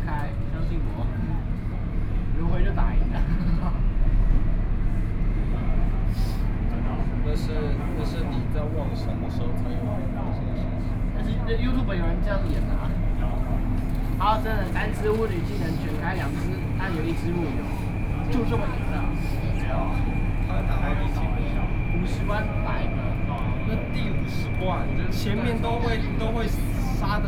from Minquan West Road station to Shilin station, Sony PCM D50 + Soundman OKM II
Taipei City, Taiwan, 10 September 2013, 16:44